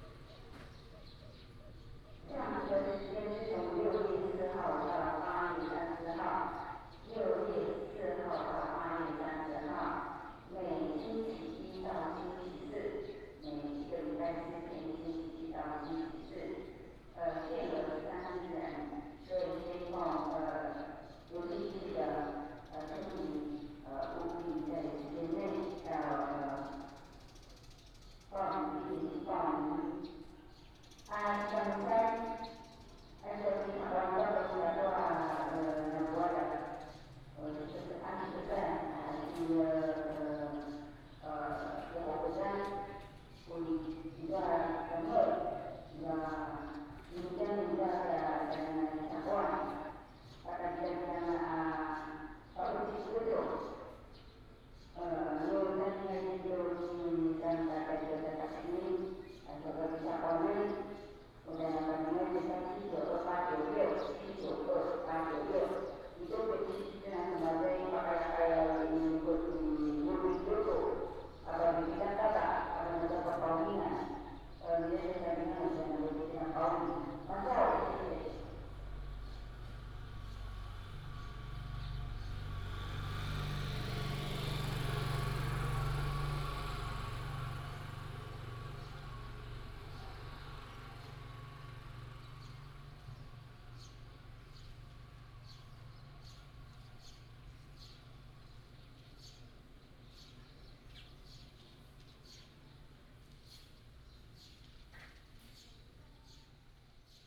大鳥247, Daniao, Dawu Township - Morning in the tribe
Morning in the tribe, Tribal Message Broadcast, birds sound, traffic sound